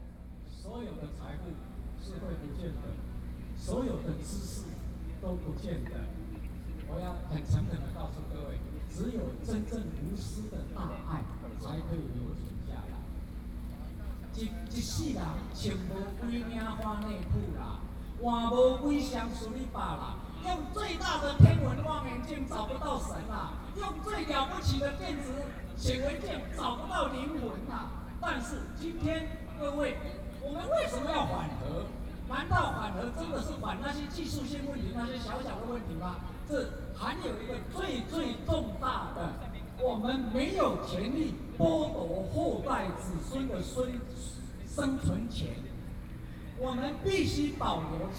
Zhongzheng, Taipei City, Taiwan - Speech
Antinuclear, Next to the protesters in the Legislative Yuan, Zoom H4n+ Soundman OKM II
中正區 (Zhongzheng), 台北市 (Taipei City), 中華民國, 26 May